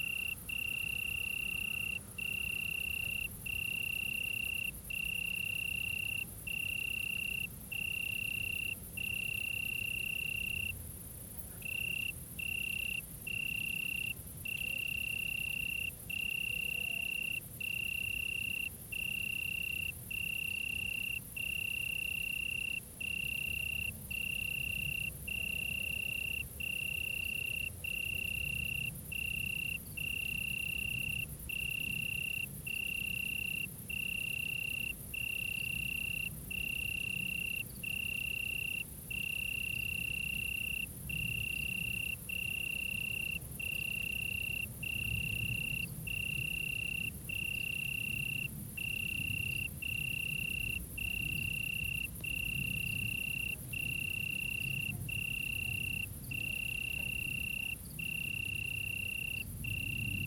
Solesmeser Str., Bad Berka, Deutschland - Suburban Germany: Crickets of Summer Nights 2022-No.1
Documenting acoustic phenomena of summer nights in Germany in the year 2022.
*Binaural. Headphones recommended for spatial immersion.
Thüringen, Deutschland, 19 August 2022, 23:59